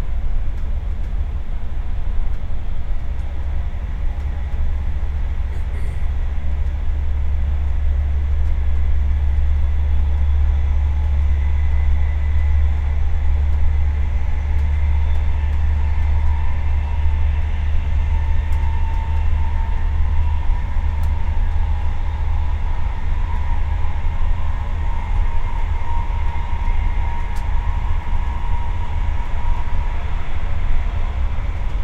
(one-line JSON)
{"title": "Neumünster, Deutschland - 6 minutes on a train", "date": "2016-12-18 10:42:00", "description": "About 6 minutes of a rather quiet train ride until arriving in Neumünster and the quietness is over. Rumbling, creaking, squeaking, announcement of next stop, doors, new loud passengers.\nZoom H6 recorder x/y capsule", "latitude": "54.08", "longitude": "9.98", "altitude": "24", "timezone": "Europe/Berlin"}